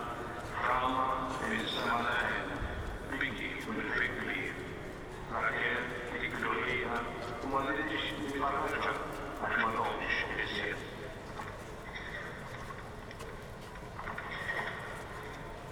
Misraħ ir-Repubblika, Żejtun, Malta - prayer and procession in the streets
The devotion towards the Passion of Jesus Christ has strong roots in Malta. When the Knights of St. John came to the Maltese Islands in 1530, they brought with them relics of the Passion, which helped to foster this devotion among the people.
Street procession, prayer amplified from inside the church, footsteps
(SD702, DPA4060)
Iż-Żejtun, Malta, 7 April